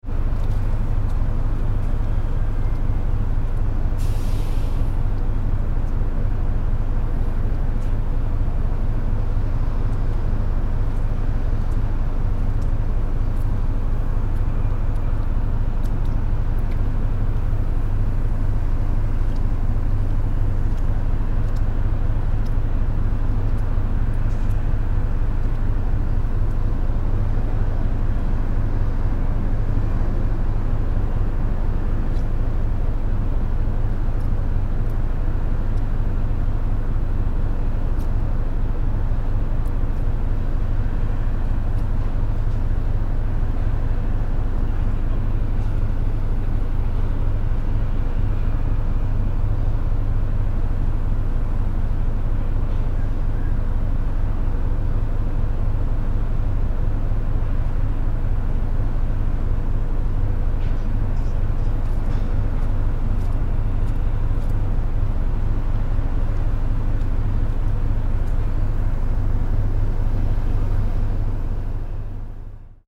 recorded on night ferry travemuende - trelleborg, july 19 to 20, 2008.
Dassow, Germany